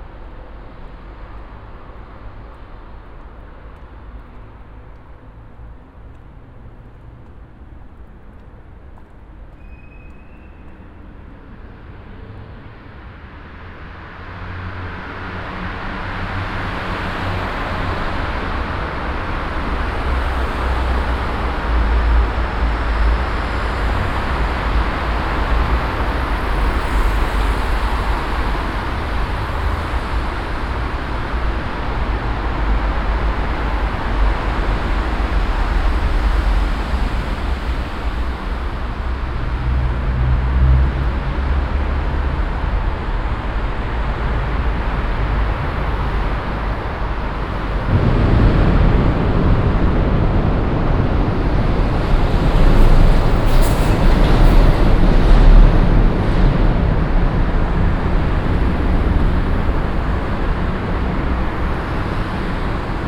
cologne, deutz, opladener str, durchfahrt an lanxess arena
fahrzeugtunnel an und unter der neu benannten kölner arena, morgens, zwei ampelphasen
soundmap nrw: social ambiences/ listen to the people - in & outdoor nearfield recordings
29 December, 21:44